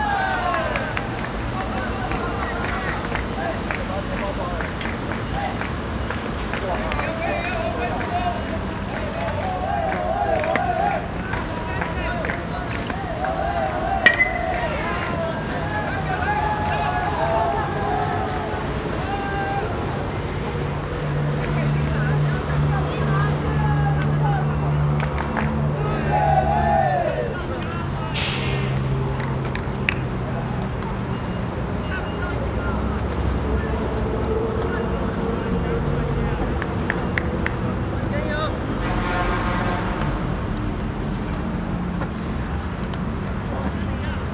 江子翠河濱公園, Banqiao Dist., New Taipei City - Softball Field
Softball Field, Traffic Sound
Zoom H4n +Rode NT4
New Taipei City, Banqiao District, 土城堤外機車專用道, 19 January 2012